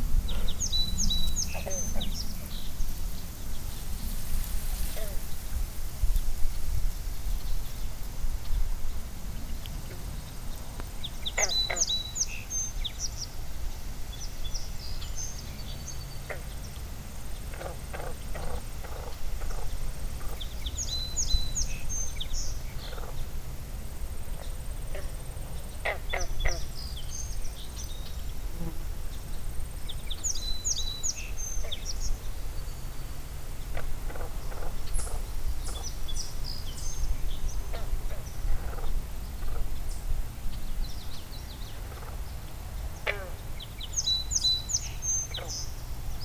1Grass Lake Sanctuary - Pond Frogs
Frogs croaking and ribbiting in a small pond!